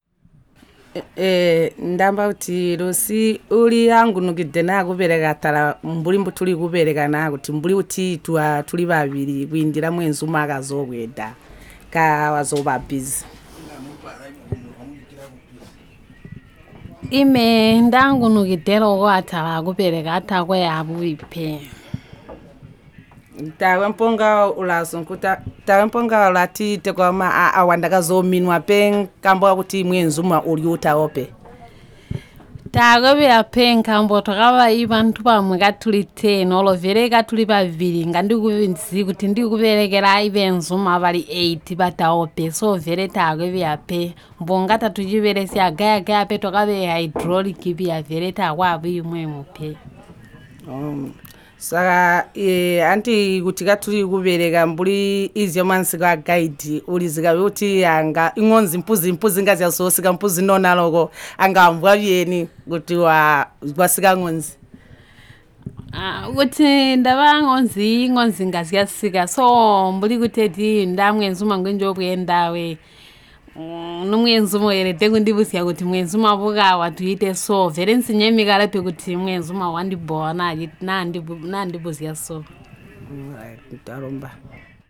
We are at Intale fishing camp at Binga harbour with Mugande, Zubo’s project manager. It’s not very often that the fishing rig is harboured here. We take the opportunity to visit the rig and the two members of the Bbindawuko Banakazi Coop who are fishing on the Lake over this month, Violet Mwinde and Lucie Mungombe. Once Mugande is done with the interview, the two women are keen to take the mic in their own hands and spontaneously record interviews with each other on their working experience as women fishing team on Lake Kariba. (Bbindawuko Banakazi means business women in the local language ChiTonga)
find the complete set of recordings including summary translations in English archived here:
11 October, ~16:00